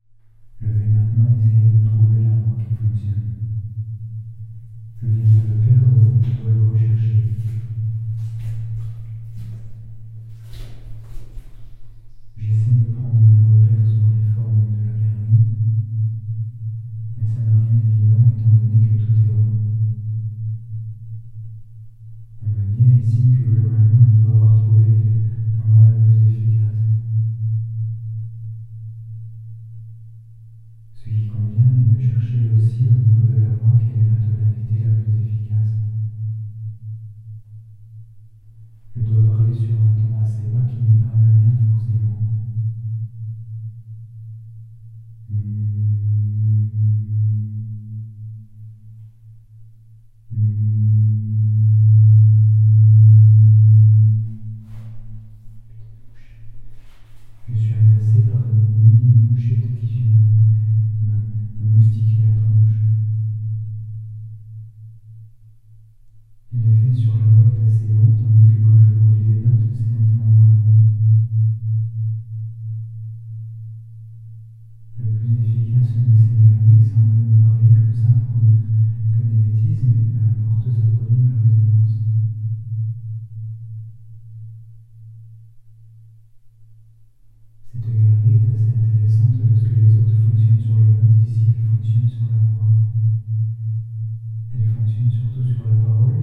Vielsalm, Belgique - Reverb in a mine tunnel
/!\ Be careful, extra loud sound /!\ Into an underground mine, I discovered a round tunnel. This one has an evocative reverb. When talking into the tunnel, it produces loud reverb on the walls and the ceiling. I'm talking and saying uninteresting sentences, it's only in aim to produce the curious sound. It's very near to be impossible to understand what I say, the sound is distorted, the low-pitched frequencies are reinforced.
6 October, 08:30